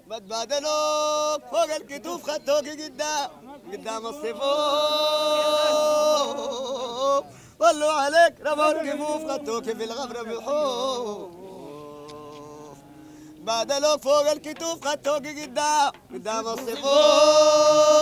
شارع الراشدين, Sudan - Tomb Sheik Hamad an-Nyl preliminary song
Tomb Sheik Hamad an-Nyl. In preperation of the dihkr there is singing.
ولاية الخرطوم, السودان al-Sūdān